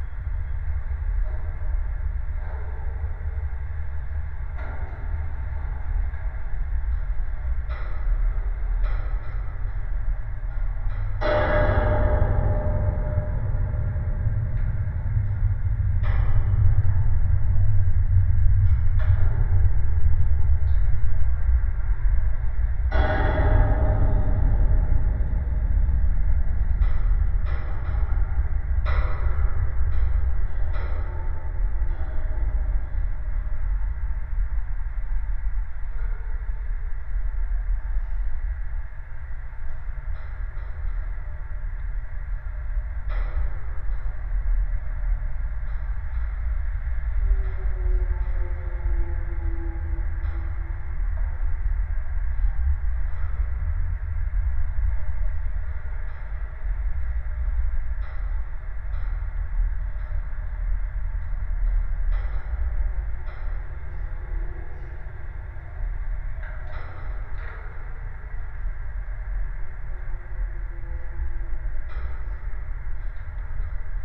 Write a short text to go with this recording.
at the construction of new supermarket. the teritory surrounded by temporary metalic fence, so, after some time, this sound will disappear in reality. contact microphones on two different segment of fence creates interesting and horrifying effect